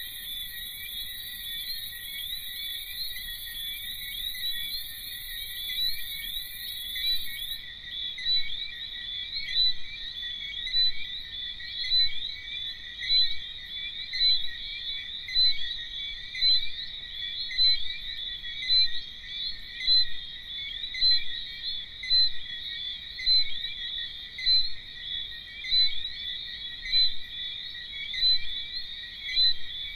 Matouba BP 66
mélodie naturelle 1